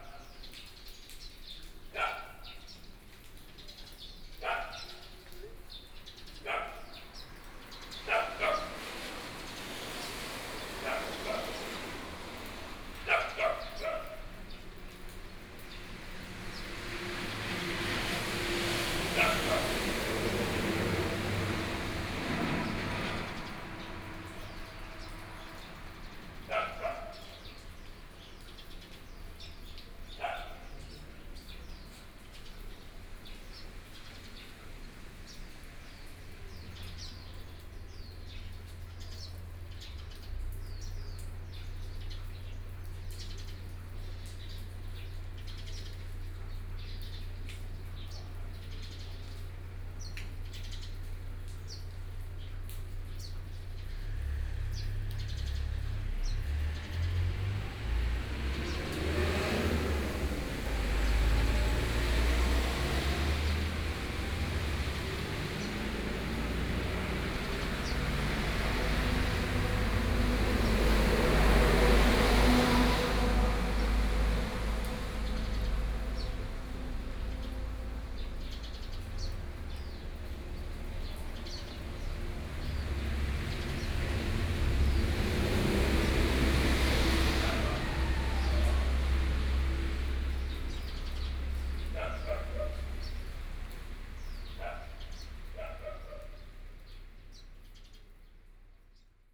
永鎮廟, 壯圍鄉永鎮村 - In the temple
In the temple, Rainy weather, Traffic Sound
Sony PCM D50+ Soundman OKM II
Yilan County, Taiwan, 22 July 2014, ~10:00